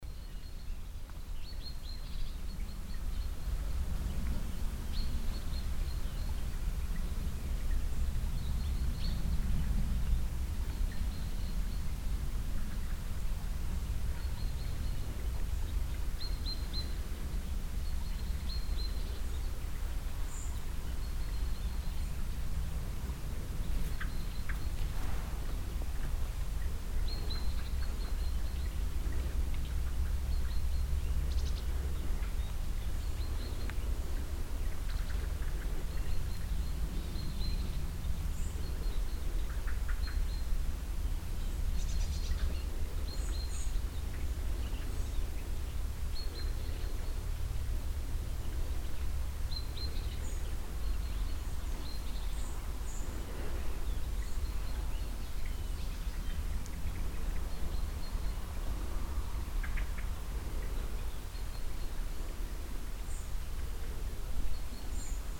nachtmanderscheid, valley
Evening in the forest valley. The sound of birds mild wind and a small stream nearby.
Nachtmanderscheid, Tal
Abends im Waldtal. Das Geräusch von Vögeln, sanftem Wind und einem kleinen Bach in der Nähe.
Nachtmanderscheid, vallée
Le soir, dans la forêt de la vallée. Le chant des oiseaux, un doux vent et un petit ruisseau proche.